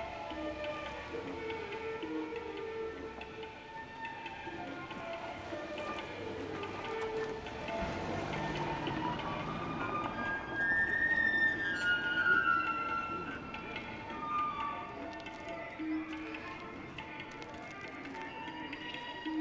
Tallinn, Lai - East meets South meets North
A group of Italian tourists with their suitcases arrive to Lai street where music is played at the Open gallery in front of the hostel. street, east, south, north, music